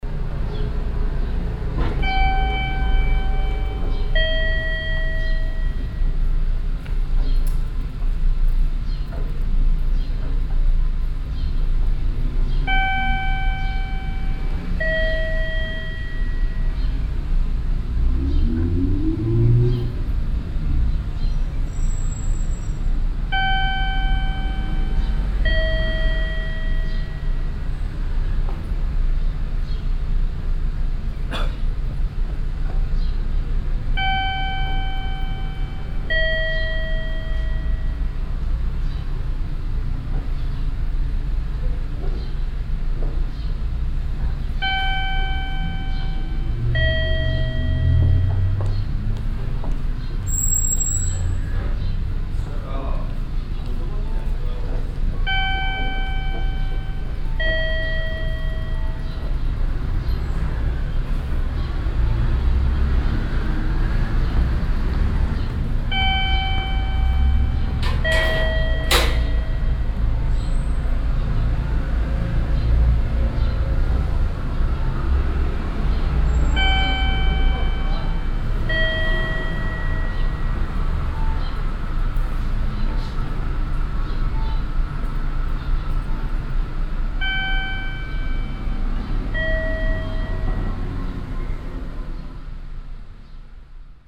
yokohama, city office, entrance bell
At the entrance of the Yokohama, City Naka Ward Office. A kind of door bell sounding as people enter or leave the building.
international city scapes - social ambiences and topographic field recordings